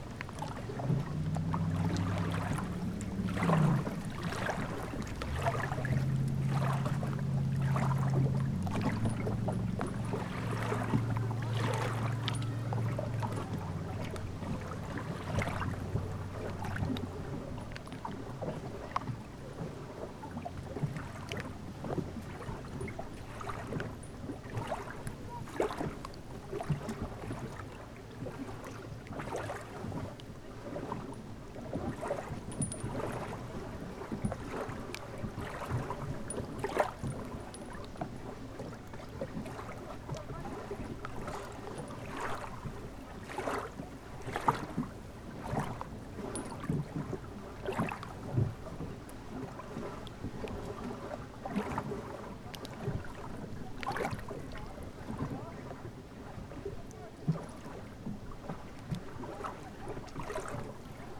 Molėtai, Lithuania, lake Bebrusai, boats
boats swaying at the shore of the lake...